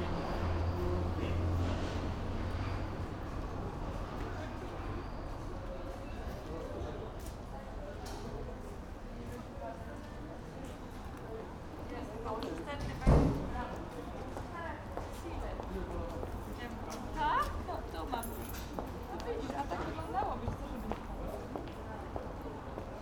Poznań, Jerzyce district, Rialto Cinema - people leaving the cinema after the show
late evening, wet ambience in front of the cinema, spectators leaving the building, everybody in great mood after watching the last Woody Allen movie. the employees are closing the cinema.